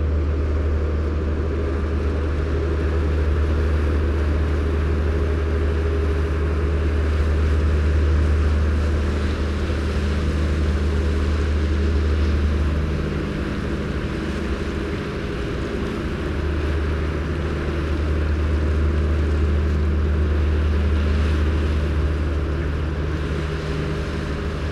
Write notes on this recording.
ship leaving harbour, cranes. Telinga Parabolic mic. Binckhorst Mapping Project